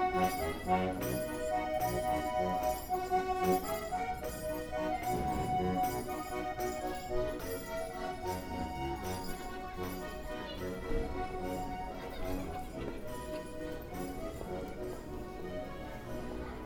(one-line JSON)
{"title": "Kastner & Öhler Graz - Vor den Toren des Shoppingparadieses", "date": "2015-12-15 11:00:00", "description": "Sackstraße - Eingang Kastner & Öhler, aufgenommen von Jennifer Höll CMS15 FH Joanneum Graz", "latitude": "47.07", "longitude": "15.44", "altitude": "368", "timezone": "Europe/Vienna"}